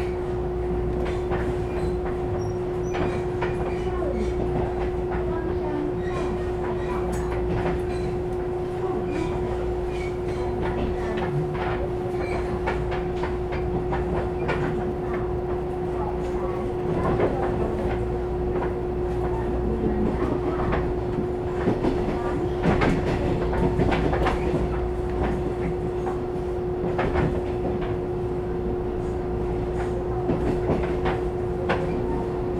from LuzhuStation toGangshan Station, Trains traveling, Train crossing, Train broadcast message, Sony ECM-MS907, Sony Hi-MD MZ-RH1
路竹區 (Lujhu), 高雄市 (Kaohsiung City), 中華民國, 29 March 2012